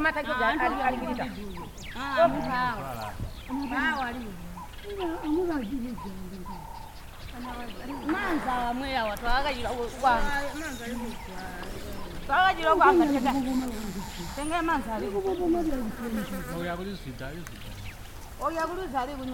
{
  "title": "Sebungwe River Mouth, Simatelele, Binga, Zimbabwe - Ambience near the new fish pond",
  "date": "2016-05-24 12:00:00",
  "description": "Ambience at our arrival near the new fish pond build by the Tuligwazye Women's Group. Zubo Trust has been supporting the women in this new project.",
  "latitude": "-17.77",
  "longitude": "27.24",
  "altitude": "496",
  "timezone": "Africa/Harare"
}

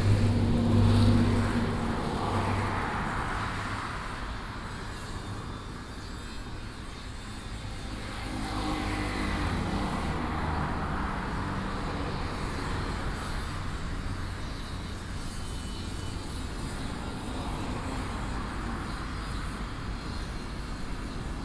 {
  "title": "Binhai Rd., 頭城鎮外澳里 - Traffic Sound",
  "date": "2014-07-07 14:38:00",
  "description": "in the parking lot, Very hot weather, Traffic Sound",
  "latitude": "24.89",
  "longitude": "121.85",
  "altitude": "9",
  "timezone": "Asia/Taipei"
}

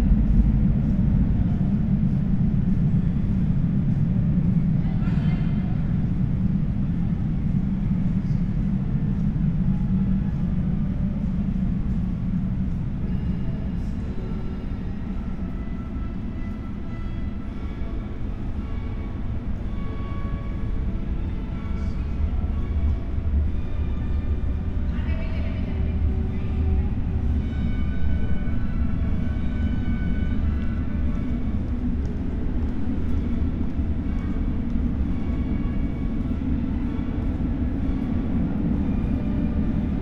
{
  "title": "Sygrou-Fix metro station, Neos Kosmos, Athens - station ambience",
  "date": "2016-04-05 20:25:00",
  "description": "the departing metro trains at Sygrou-Fix station produce at remarkable deep drone after they've left the station\n(Sony PCM D50, Primo EM172)",
  "latitude": "37.96",
  "longitude": "23.73",
  "altitude": "66",
  "timezone": "Europe/Athens"
}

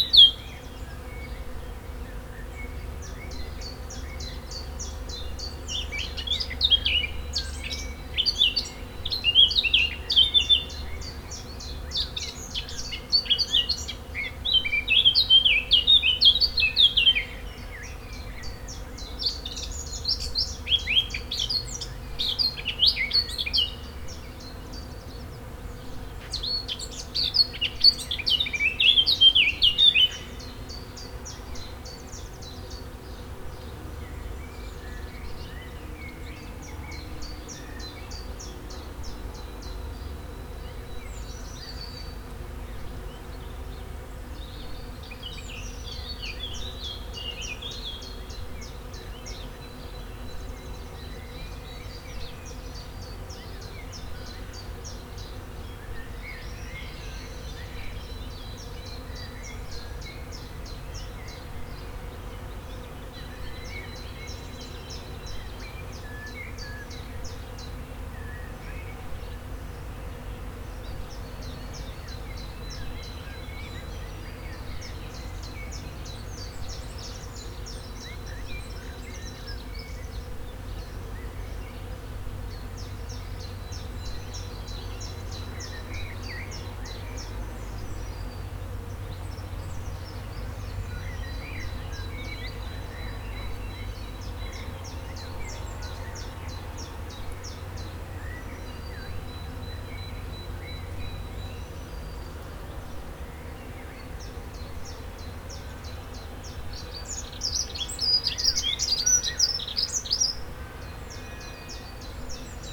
{
  "title": "Lindlarer Str., Lohmar, Deutschland - Bienen im Weissdorn, Vögel mit Kreissäge",
  "date": "2020-04-28 11:00:00",
  "description": "It is located near a forest and a field. The bees enjoy themselves in the hawthorn. Recordet with Zoom H4n on bench in our garden.",
  "latitude": "50.92",
  "longitude": "7.24",
  "altitude": "227",
  "timezone": "Europe/Berlin"
}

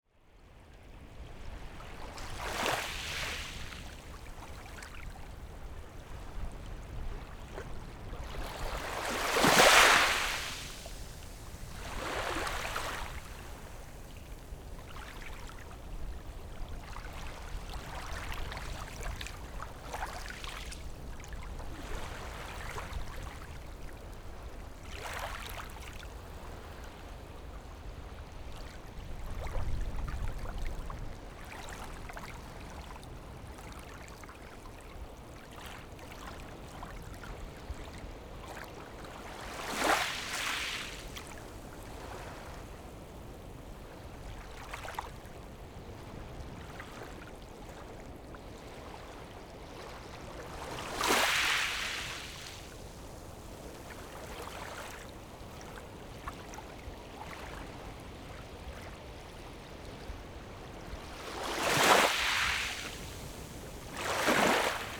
{
  "title": "后沃海濱公園, Beigan Township - Waves and tides",
  "date": "2014-10-15 14:01:00",
  "description": "Waves and tides, Small beach, Tide\nZoom H6 +Rode NT4",
  "latitude": "26.22",
  "longitude": "120.00",
  "altitude": "1",
  "timezone": "Asia/Taipei"
}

